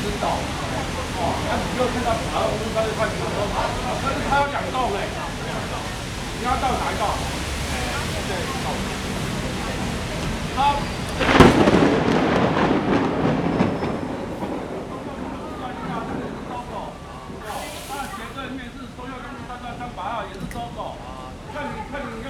Da'an District, Taipei - Thunderstorm